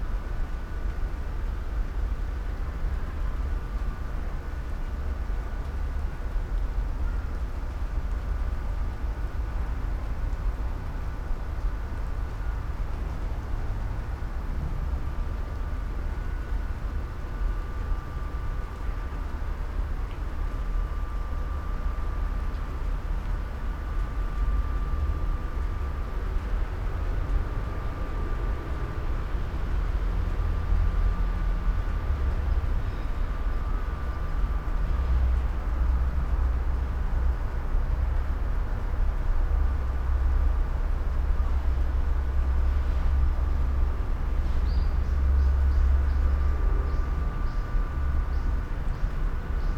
small pond, Shoseien garden, Kyoto - rain